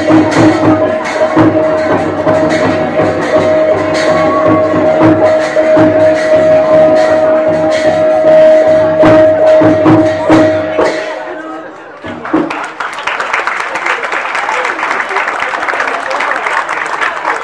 เชียงใหม่, Thailand (Khong dance dinner) - เชียงใหม่, Thailand (Khong dance dinner) 3
Khong dance dinner in Old culture center, Chiang Mai; 26, Jan, 2010 (Sword dance)